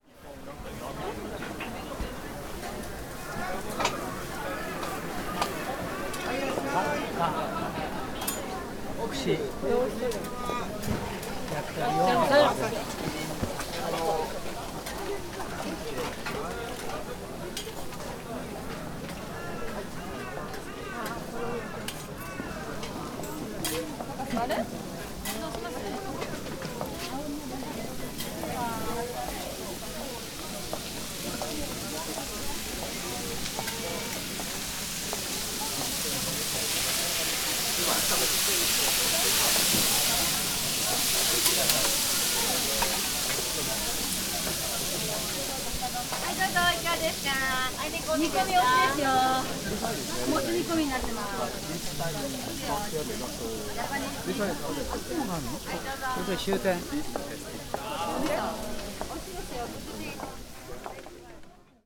Tokyo, Uedo Park - food alley
a narrow alley with food stalls on one side (cooks making all kind of dishes, frying, steaming, boiling) and picnic tables on the other (all taken by people talking and contemplating nature)